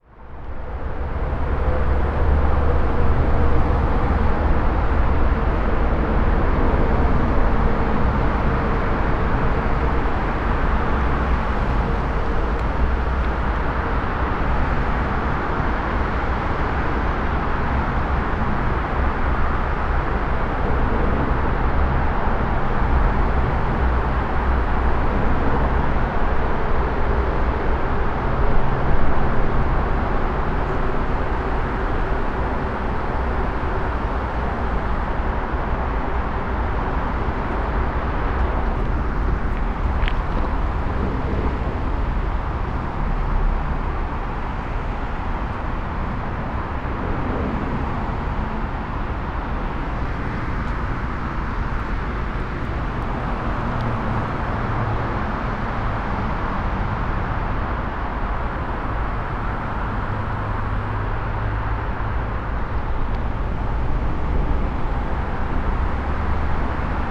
viadukt Vodole, Slovenia - under traffic
highway traffic from above, below long concrete viaduct
Malečnik, Slovenia, 16 August 2013